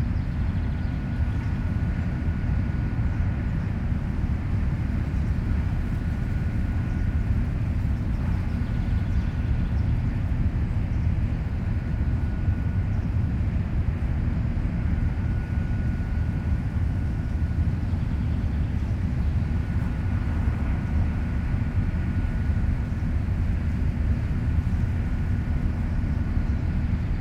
ERM fieldwork -Estonia mine soundscape
soundscape at the ESTONIA mine facility